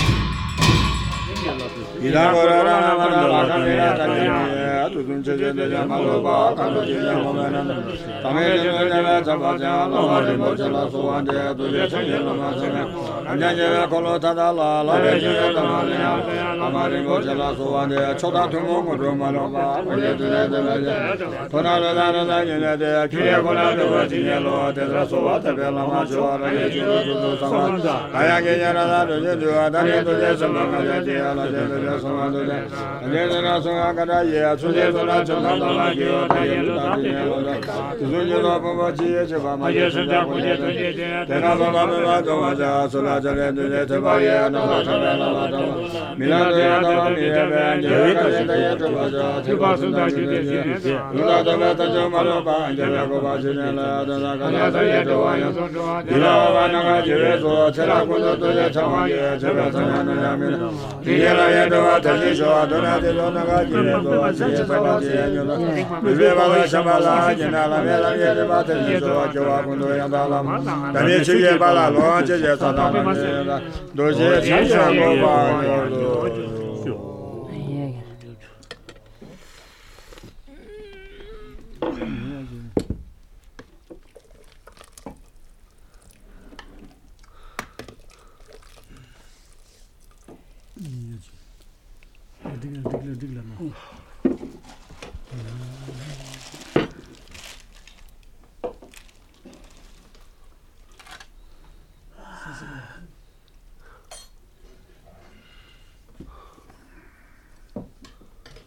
4GGG+6G Leh - Leh - Ladak - Inde
Leh - Ladak - Inde
Monastère Spitukh Gonpah - avec une vue imprenable sur l'aéroport de Leh.
Ambiance et cérémonie
Fostex FR2 + AudioTechnica AT825
Ladakh, India, 18 May 2008